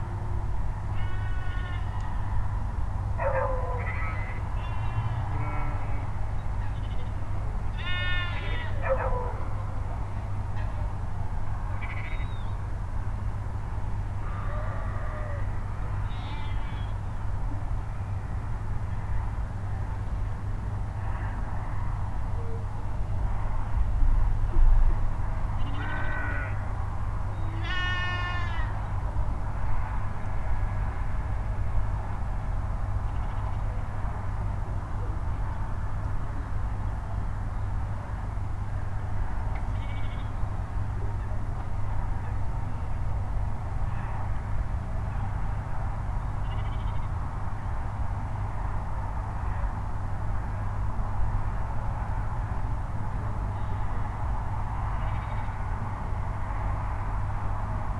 workum: berth of marina restaurant - the city, the country & me: sheep vs. road drone
berth of marina restaurant, sheep vs. road drone
the city, the country & me: july 31, 2015